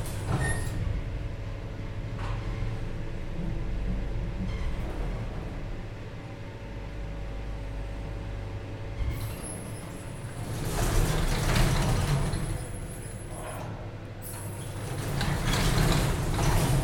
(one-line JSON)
{"title": "Martin Buber St, Jerusalem - Elevator at Bezalel Academy of Arts and Design", "date": "2019-03-25 15:45:00", "description": "An elevator at Bezalel Academy of Arts and Design.\nStops 3rd floor to 8th floor/", "latitude": "31.79", "longitude": "35.25", "altitude": "807", "timezone": "Asia/Jerusalem"}